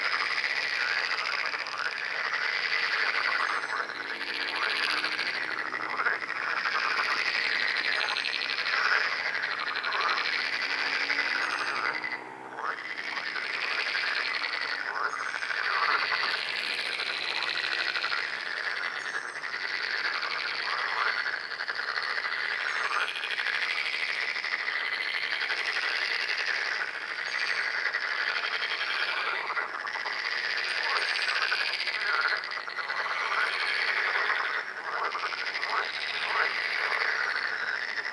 Frogs chorus in local park. Also, occasionally, you can hear song of long-eared owl